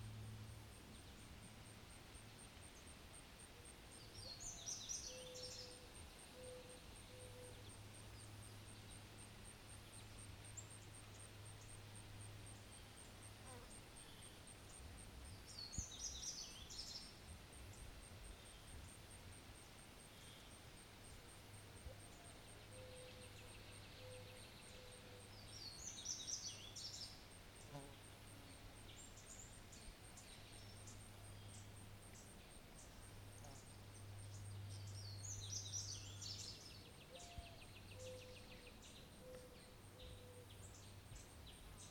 Trail, Ouabache State Park, Bluffton, IN, USA (recording by Mike Geglio) - Birds and insects on Trail 1, Ouabache State Park, Bluffton, IN, USA (recording by Mike Geglio)
Audio recorded by Mike Geglio. Birds and insects on Trail 1 at Ouabache State Park. Recorded at an Arts in the Parks Soundscape workshop at Ouabache State Park, Bluffton, IN. Sponsored by the Indiana Arts Commission and the Indiana Department of Natural Resources.
Indiana, USA, 21 July 2019